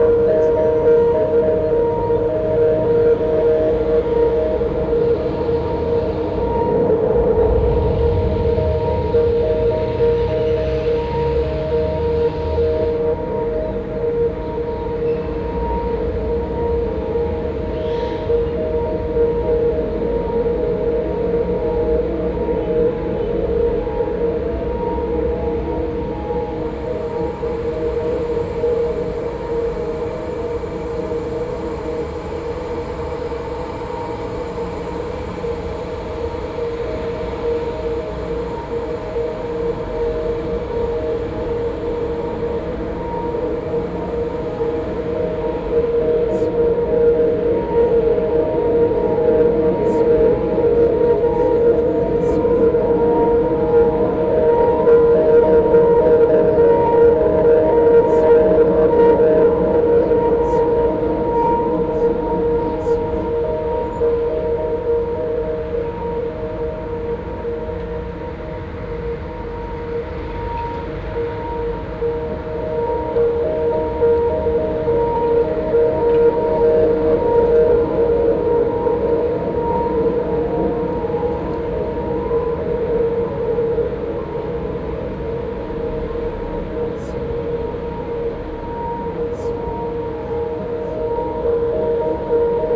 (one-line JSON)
{"title": "Cetatuia Park, Klausenburg, Rumänien - Cluj, Fortress Hill project, water fountain sculptures", "date": "2014-05-27 10:15:00", "description": "At the temporary sound park exhibition with installation works of students as part of the Fortress Hill project. Here the sound of the water fountain sculpture realized by Raul Tripon and Cipi Muntean in the second tube of the sculpture.\nSoundmap Fortress Hill//: Cetatuia - topographic field recordings, sound art installations and social ambiences", "latitude": "46.77", "longitude": "23.58", "altitude": "372", "timezone": "Europe/Bucharest"}